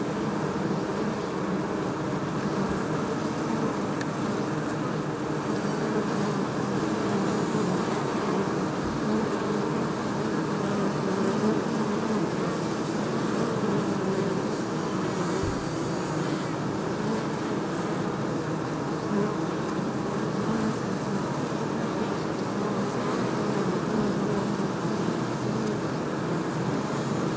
Beehives at Byeonsan
Man-made beehives at ground level within Byeonsan National Park